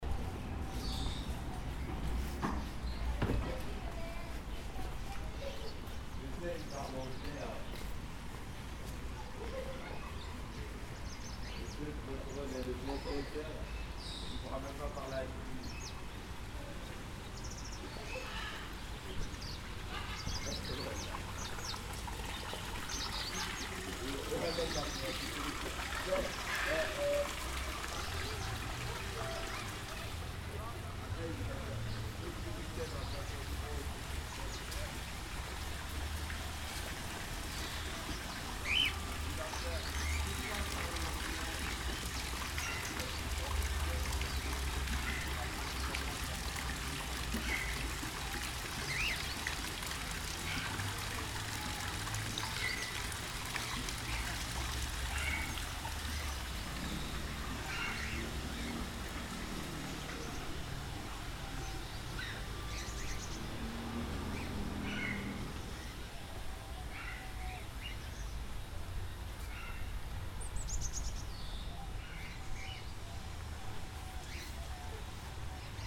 Lausanne, Parc Mon Repos - Lausanne, parc mon repos
Lausanne, Parc mon Repos, Bundesgericht